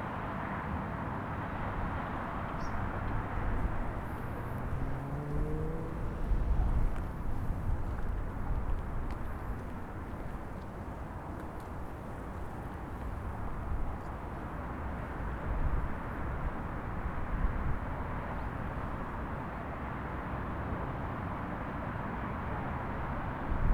Recorder in Lisbon. Between the city mess and the green mountain o Monsanto.
Lisboa, Portugal, 5 November, 15:04